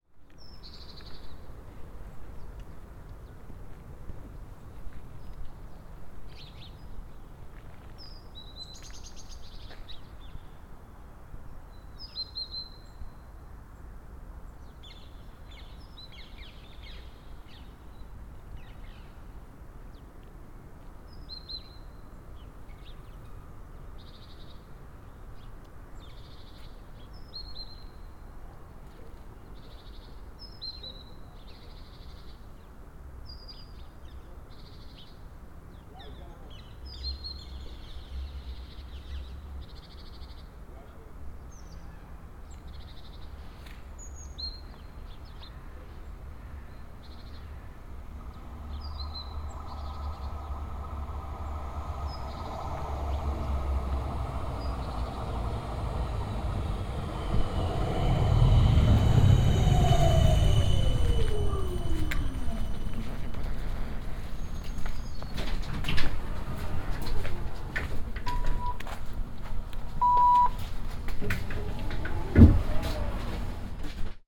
Laan van Vlaanderen, Amsterdam, Netherlands - (297 BI) Morning birds and tram
Binaural recording of morning birds, while waiting for the tram (that arrives sooner than expected).
Recorded with Soundman OKM on Sony PCM D100
Noord-Holland, Nederland, 17 September 2017, 09:32